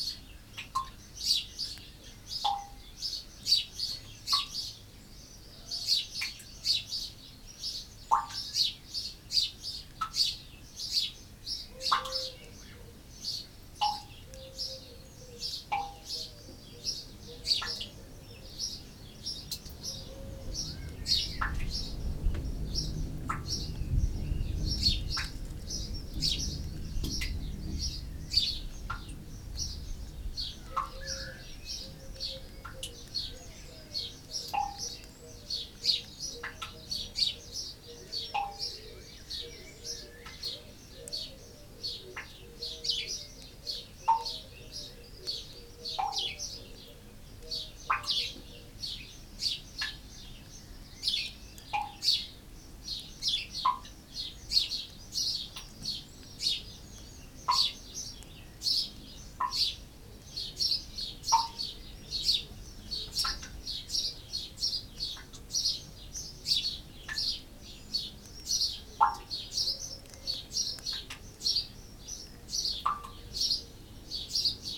Luttons, UK - down the drainpipe ...
down the drainpipe ... droplets recorded at the bottom of a downpipe ... single lavalier mic blu tacked to a biro placed across the grating ... background noise ... bird calls from collared dove ... blackbird ... house sparrow ... wren ...
Malton, UK, 6 June